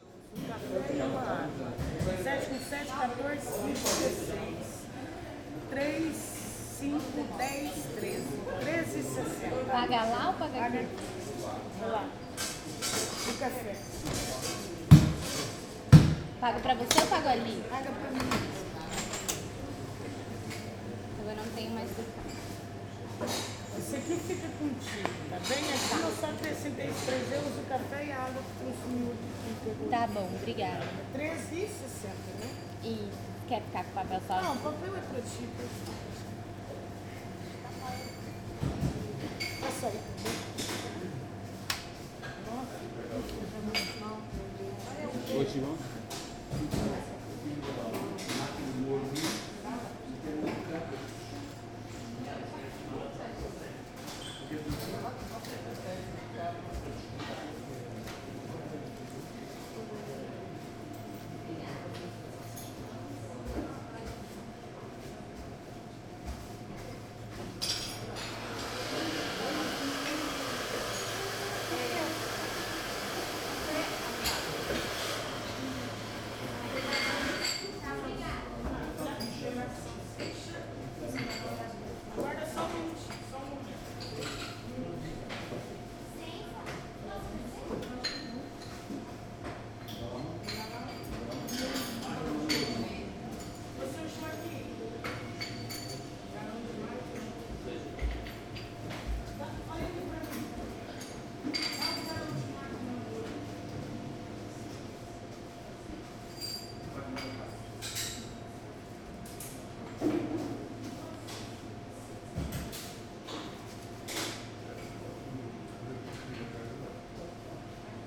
{"title": "Lisbon, R.Garett - a Brasileira", "date": "2010-07-03 10:00:00", "description": "coffee break in Cafe a Brasileira. Fernando Pessoa had some drinks here too. poor Pessoa now has to sit forever in front of the cafe, as a bronze statue.", "latitude": "38.71", "longitude": "-9.14", "altitude": "59", "timezone": "Europe/Lisbon"}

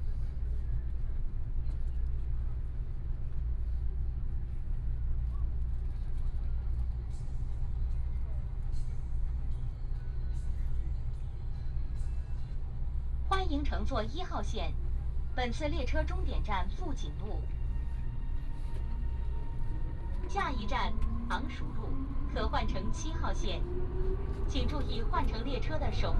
{"title": "Xuhui District, Shanghai - Line 1 (Shanghai Metro)", "date": "2013-12-03 14:33:00", "description": "from Xujiahui station to Changshu Road station, Walking through the subway station, Binaural recording, Zoom H6+ Soundman OKM II", "latitude": "31.21", "longitude": "121.44", "altitude": "7", "timezone": "Asia/Shanghai"}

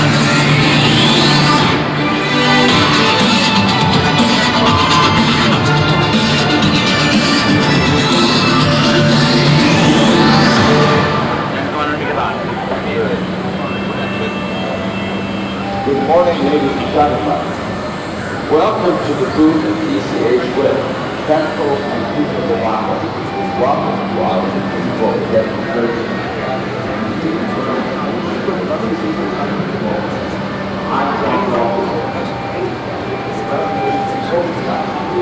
{"title": "Stockum, Düsseldorf, Deutschland - düsseldorf, trade fair, hall 15", "date": "2012-05-07 10:30:00", "description": "Inside hall 15 of the Düsseldorf trade fair during the DRUPA. The sound of moderated product presentations within the overall sound of different kind of machines in the wide hall ambience.\nsoundmap nrw - social ambiences and topographic field recordings", "latitude": "51.26", "longitude": "6.75", "altitude": "39", "timezone": "Europe/Berlin"}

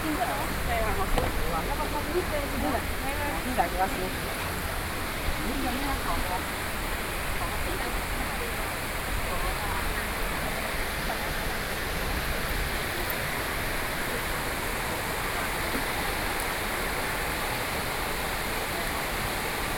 {"title": "Linquan Lane, Taipei - Beitou Park", "date": "2012-10-26 15:48:00", "latitude": "25.14", "longitude": "121.51", "altitude": "48", "timezone": "Asia/Taipei"}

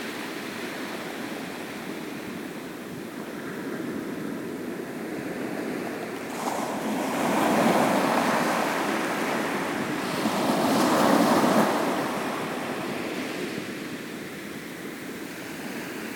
{"title": "Scheveningen, Nederlands - The sea", "date": "2019-03-29 20:00:00", "description": "Scheveningen, the sea at Meijendel.", "latitude": "52.14", "longitude": "4.31", "altitude": "2", "timezone": "Europe/Amsterdam"}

